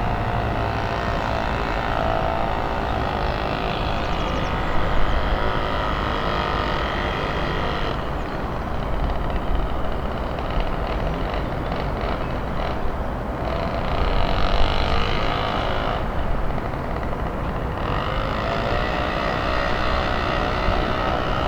Poznan, balcony - eager weed-whacker
a man overdriving a string trimmer in the early morning hours. the machine grinding, chugging and roaring as it's eating itself into the exuberant grass.